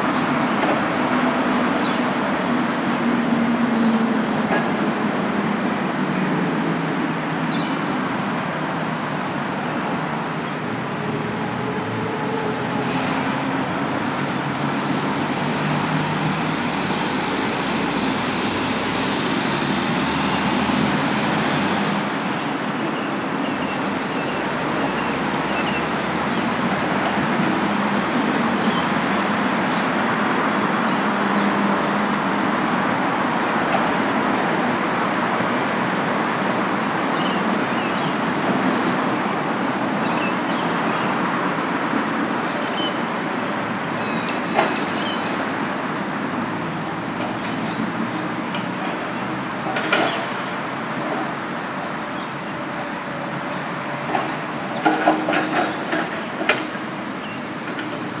{"title": "Lindfield NSW, Australia - Birds and traffic", "date": "2013-07-18 13:38:00", "description": "On the edge of Lane Cove National Park. Birds chirping, traffic noise, nearby building work. A popular spot to sit and have lunch.", "latitude": "-33.79", "longitude": "151.15", "altitude": "21", "timezone": "Australia/Sydney"}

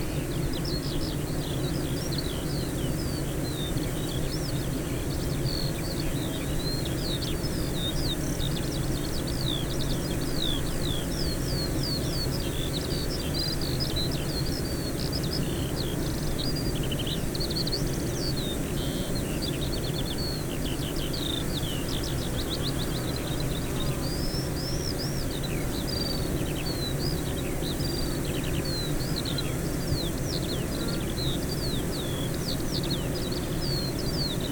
{"title": "Green Ln, Malton, UK - bee hives ...", "date": "2020-06-25 05:45:00", "description": "bee hives ... eight bee hives in pairs ... dpa 4060 to Zoom F6 ... mics clipped to bag ... bird song ... calls skylark ... corn bunting ...", "latitude": "54.13", "longitude": "-0.56", "altitude": "105", "timezone": "Europe/London"}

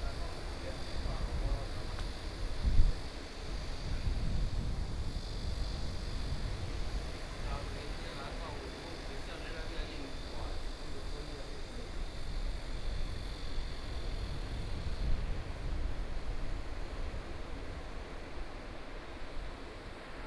{"title": "永鎮海濱公園, Yilan County - At the beach", "date": "2014-07-26 15:06:00", "description": "At the beach, Sound wave, Birdsong sound, Small village\nSony PCM D50+ Soundman OKM II", "latitude": "24.77", "longitude": "121.82", "altitude": "15", "timezone": "Asia/Taipei"}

